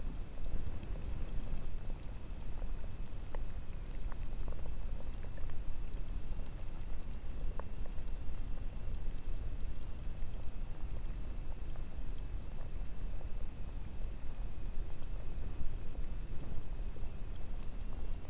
막걸리 만들기 과정 (시작 120시 후에) Rice wine fermentation (5th day)
막걸리 만들기 과정_(시작 120시 후에) Rice wine fermentation (5th day)
22 November 2020, 21:00